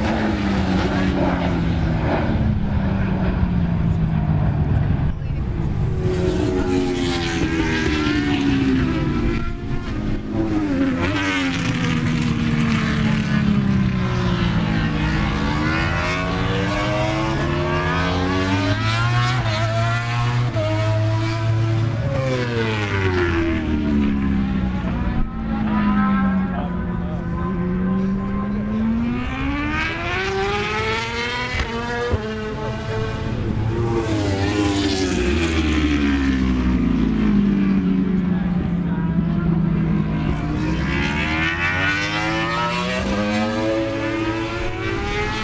Donington Park Circuit, Derby, United Kingdom - British Motorcycle Grand Prix 2003 ... moto grandprix ...
British Motorcycle Grand Prix 2003 ... Practice part two ... 990s and two strokes ... one point stereo mic to minidisk ...